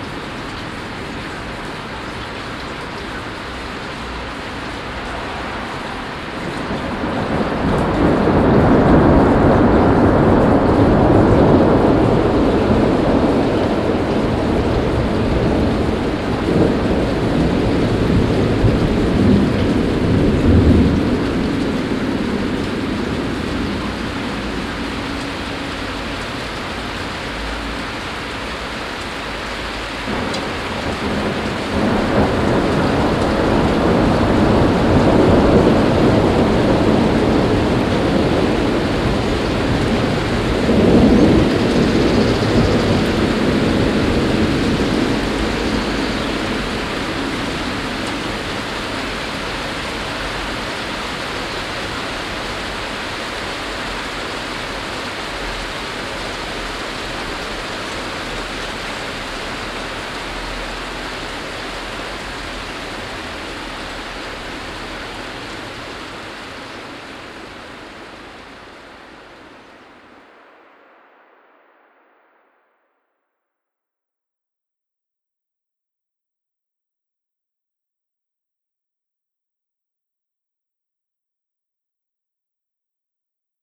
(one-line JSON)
{"title": "Oberkassel, Düsseldorf, Deutschland - Düsseldorf, under rhine bridge, April hailstorm", "date": "2012-04-19 10:16:00", "description": "Standing under a part of a rhine bridge at an april early afternoon. The sound of a hailstorm and some passing by cars in the distance.\nsoundmap nrw - topographic field recordings and social ambiences", "latitude": "51.23", "longitude": "6.76", "altitude": "31", "timezone": "Europe/Berlin"}